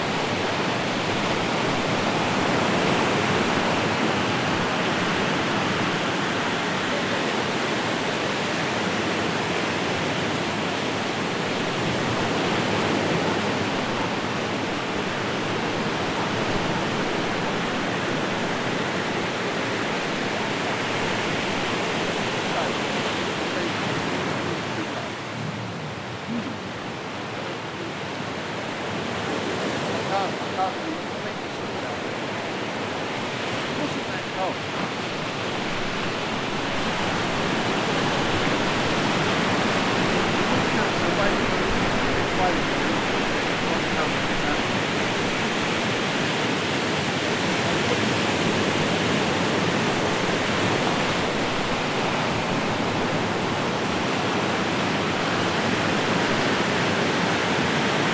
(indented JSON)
{
  "title": "Berriedale Ave, Hove, UK - Portslade beach",
  "date": "2020-01-08 14:05:00",
  "description": "Foggy, rainy afternoon in January with friends at the seafront.",
  "latitude": "50.83",
  "longitude": "-0.19",
  "timezone": "Europe/London"
}